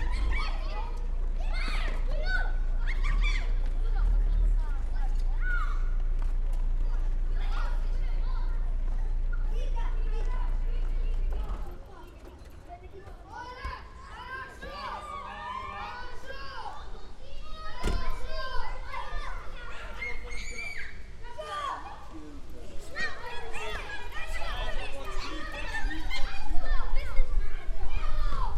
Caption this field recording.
Kids playing football in front of church, cars and motorcycles passing by, people chatting. Recorded with a SD mixpre6 with a a pair of 172 primos clippy (omni mics) in AB stereo setup.